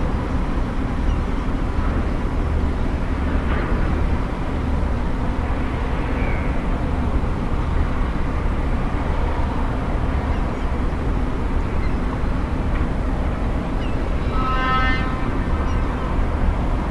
Calais, ferry docks
Calais, parking facing the ferry docks. Zoom H2.